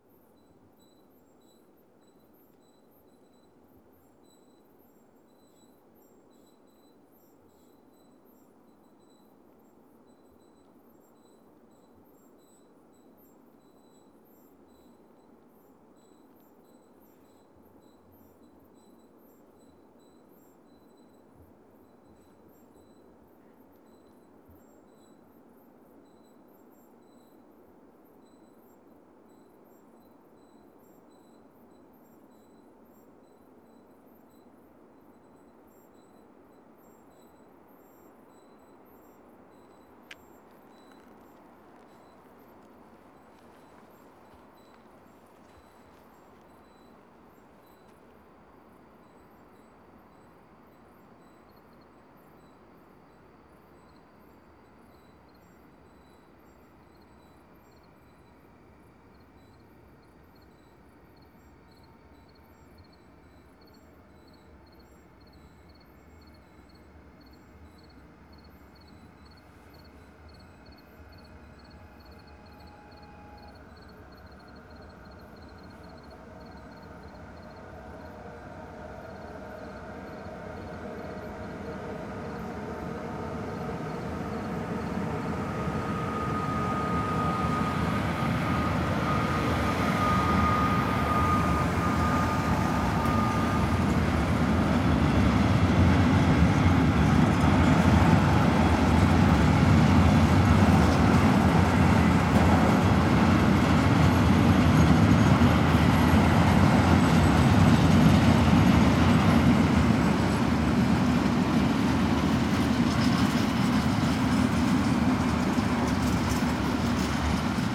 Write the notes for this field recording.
Kurort Rathen, river Elbe, night ambience at the railroad crossing. Something's squeaking, a cricket tunes in, a very long freight train is passing by at low speed and can be heard very long, echoing in the Elbe valley. After 5 minutes, the next train is arriving already. (Sony PCM D50)